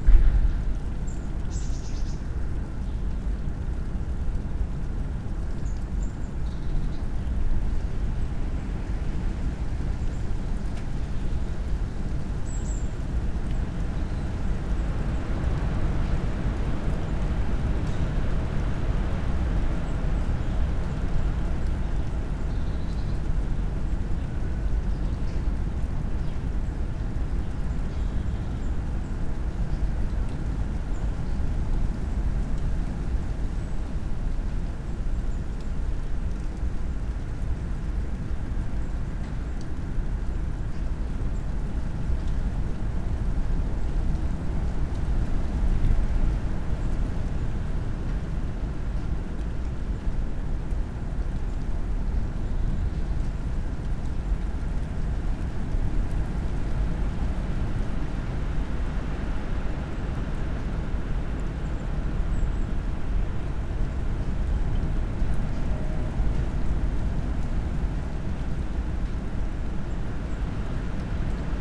Berlin Bürknerstr., backyard window - strong wind, light snow
constant drone of strong wind in my backyard, effects of a storm hitting the north of germany.
(PCM D50, EM172)